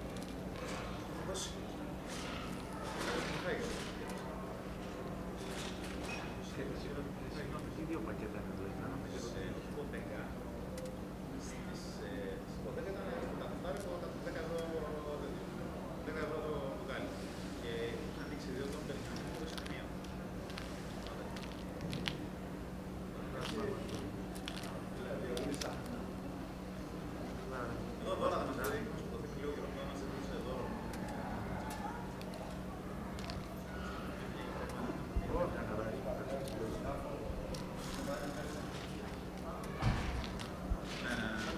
Local people talking. A flock of pigeons are eating.
Kerkira, Greece, 30 March 2019, 12:08